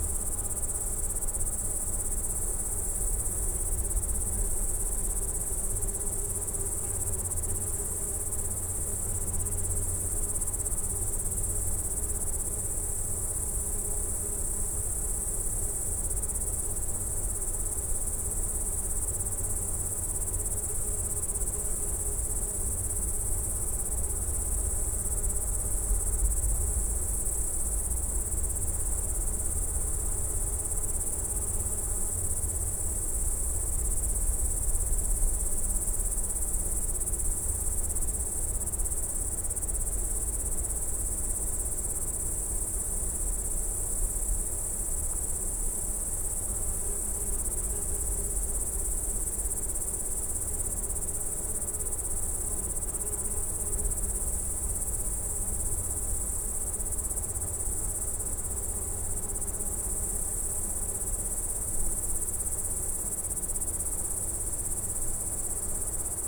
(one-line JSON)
{"title": "Rázcestie pod Bystrou, Unnamed Road, Pribylina, Slovakia - West Tatras, Slovakia: Evening on a Mountain Meadow", "date": "2019-09-13 17:32:00", "description": "Sunny autumn evening on a mountain meadow in West Tatras. Sound of crickets, few bees from nearby small beehive, few birds, wind in coniferous forest, distant creek.", "latitude": "49.14", "longitude": "19.85", "altitude": "996", "timezone": "Europe/Bratislava"}